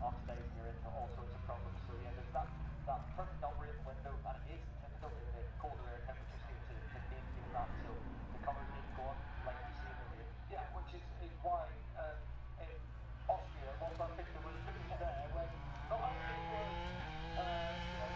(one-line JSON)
{"title": "Silverstone Circuit, Towcester, UK - british motorcycle grand prix ... 2021", "date": "2021-08-27 14:10:00", "description": "moto grand prix free practice two ... maggotts ... dpa 4060s to MixPre3 ...", "latitude": "52.07", "longitude": "-1.01", "altitude": "158", "timezone": "Europe/London"}